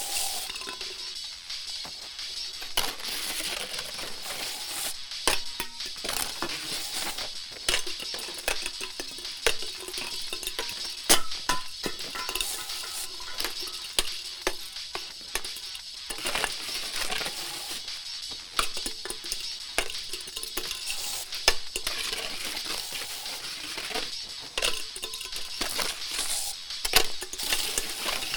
Montreal: Ave. Coronation to Ave. Somerled - Ave. Coronation to Ave. Somerled
3 January 2009, 16:00